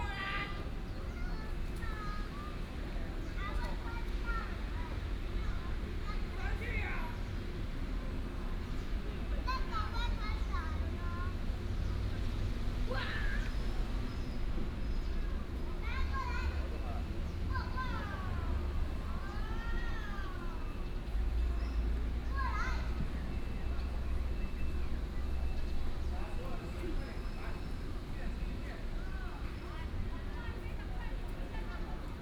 大龍峒保安宮, Datong Dist., Taipei City - in the temple
Walking in the temple, Traffic sound, sound of birds, The plane flew through
9 April 2017, 17:16